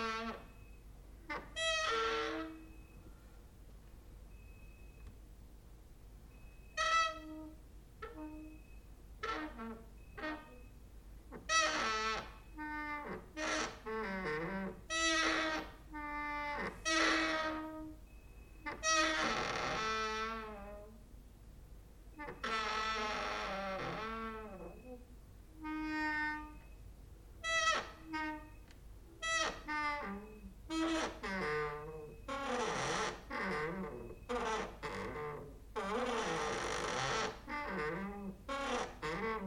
cricket outside, exercising creaking with wooden doors inside
12 August 2012, 23:32